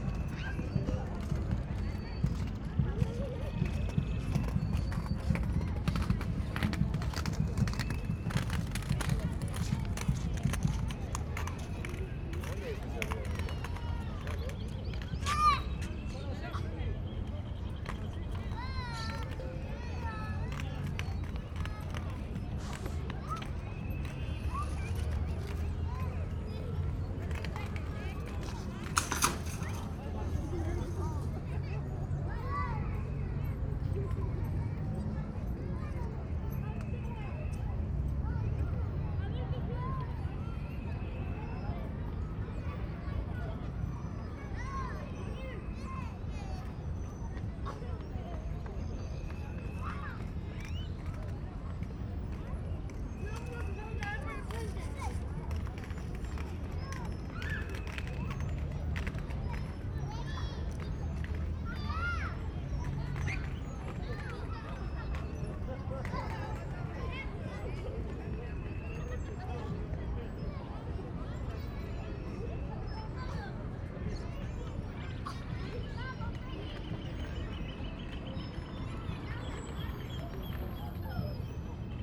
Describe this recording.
Children and families play in a playground on a brisk, partly cloudy Sunday afternoon in winter. On one side, kids line up to slide down a zip line, their parents running after them. On the other side, a typical playground. Recorded from a bench on the path, using a Sennheiser AMBEO VR (ambisonic) and rendered to binaural using KU100 HRTF.